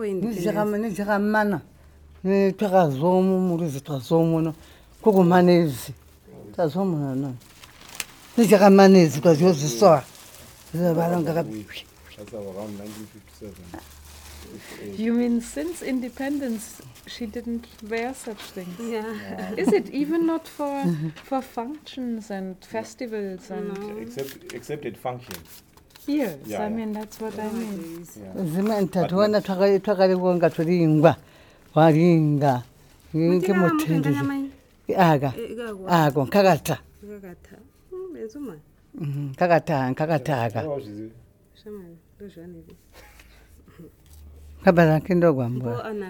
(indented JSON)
{
  "title": "BaTonga Museum, Binga, Zimbabwe - Janet and Luyando - colours of beets...",
  "date": "2012-11-12 11:40:00",
  "description": "…. during our following conversation, Janet is putting on various artifacts and parts of a bride’s beets costume while she’s explaining and telling stories of rituals and customs.… towards the end of this long real-time take, she mentions also the women’s custom of placing red beets on the bed, indicating to her husband that she’s in her menstrual cycle … (this is the image that you can hear the painter Agness Buya Yombwe in Livingstone refer to…)\n(in ChiTonga with summary translations)",
  "latitude": "-17.62",
  "longitude": "27.35",
  "altitude": "609",
  "timezone": "Africa/Harare"
}